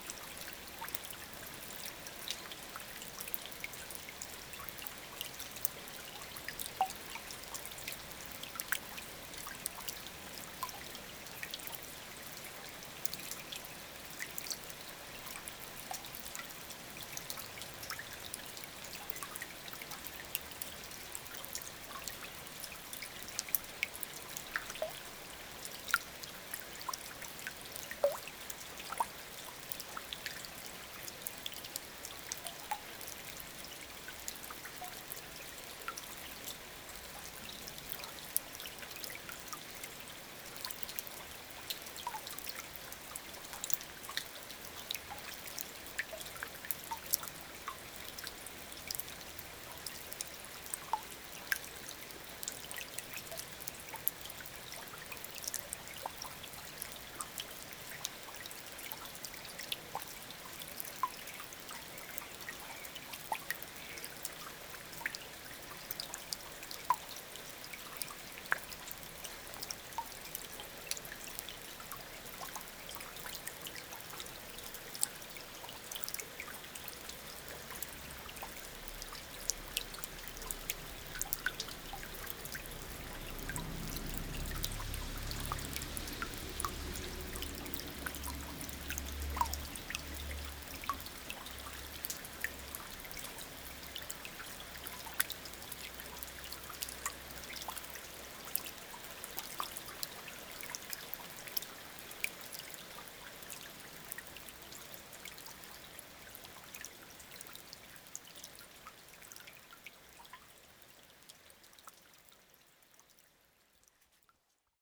Saint-Martin-de-Nigelles, France - Drouette river
It's the end of a long sad rain. Into an old wash-house and near a farm, the Drouette river flows very quietly.
9 August 2017, ~17:00